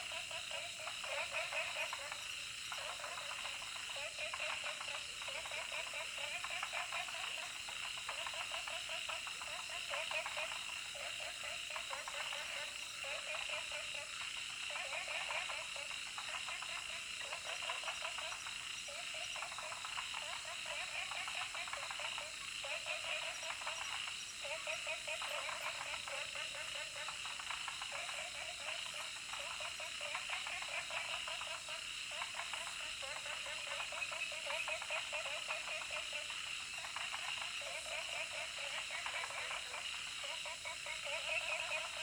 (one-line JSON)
{"title": "顏氏牧場, 埔里鎮桃米里, Taiwan - Frogs and Insects sounds", "date": "2016-06-07 19:15:00", "description": "Frogs chirping, Insects called\nZoom H2n MS+XY", "latitude": "23.93", "longitude": "120.91", "altitude": "701", "timezone": "Asia/Taipei"}